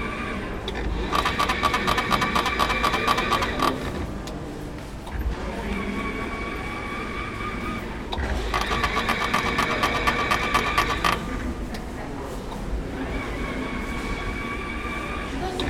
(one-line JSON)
{"title": "U8 Hermannplatz - rotierende Werbung, nah", "date": "2008-10-21 15:30:00", "latitude": "52.49", "longitude": "13.42", "altitude": "42", "timezone": "Europe/Berlin"}